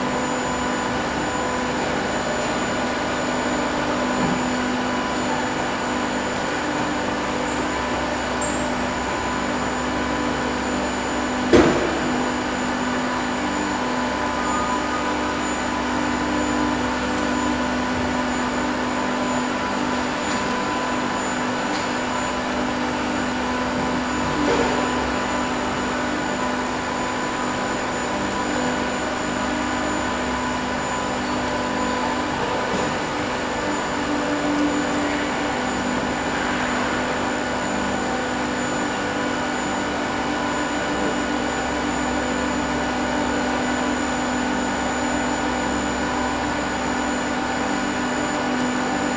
Madeley, Telford and Wrekin, UK - Central ambience near build area
Harmonically beautiful and complex factory ambience. Recorded with Roland R-26 using two of the built in microphones in XY configuration. Industrial sized 3D printers work night and day producing ever-changing products in a vast hangar style space.
21 May 2012